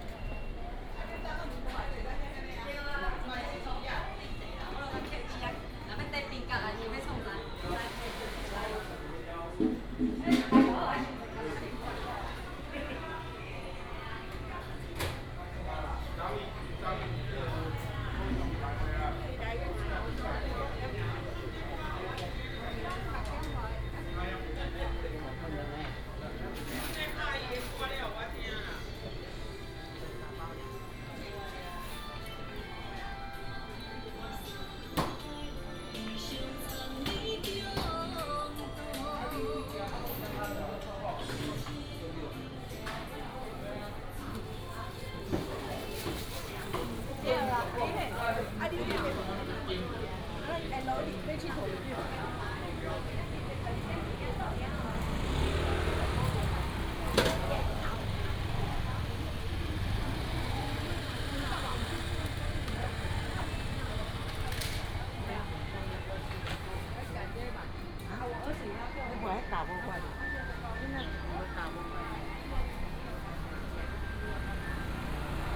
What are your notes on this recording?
Walking through the market, Walking in a small alley, Traditional small market